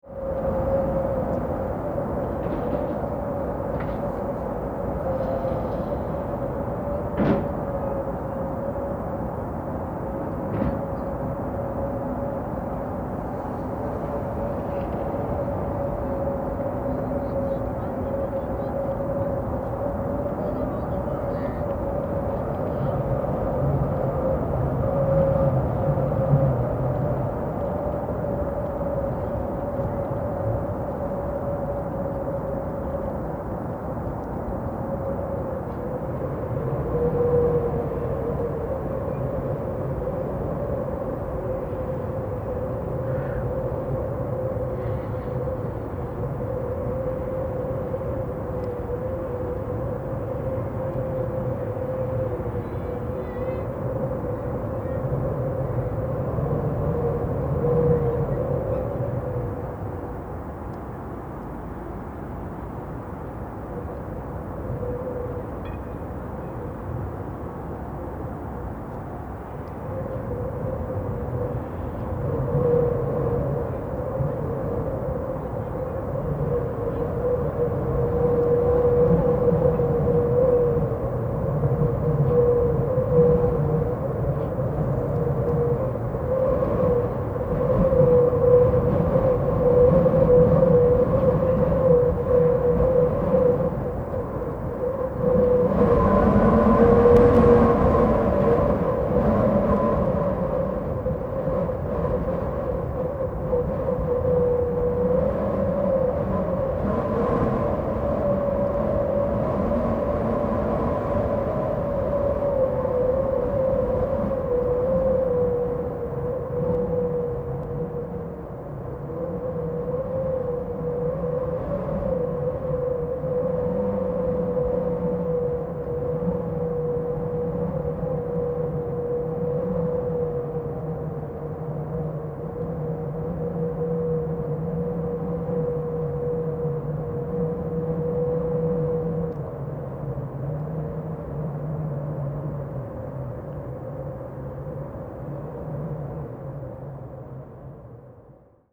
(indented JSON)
{
  "title": "Wind singing in a metal fence.",
  "date": "2011-11-25 16:46:00",
  "description": "Wind is one of the perpetual features of the huge open space that is currently Tempelhof Airport. Plans are currently being drawn up for its re-development in the near future",
  "latitude": "52.48",
  "longitude": "13.40",
  "altitude": "41",
  "timezone": "Europe/Berlin"
}